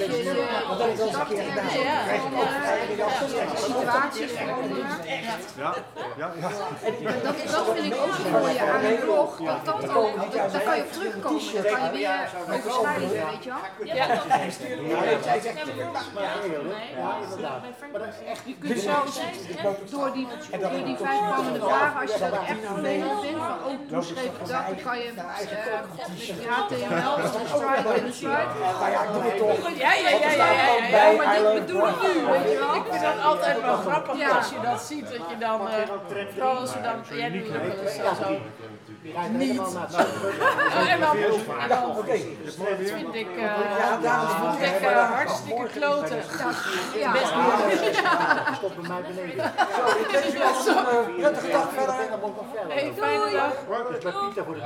Wagenstraat, Den Haag @ 7 o clock Opuh Koffie
weekly Opuh Koffie / Open Coffee The Hague
The Hague Center, The Netherlands, 4 January 2012, ~12:00